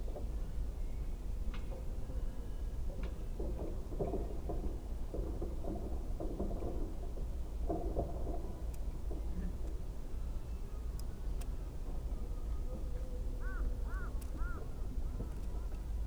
얼음된 호수 위에 겨울 일출 dawn hits the frozen lake ice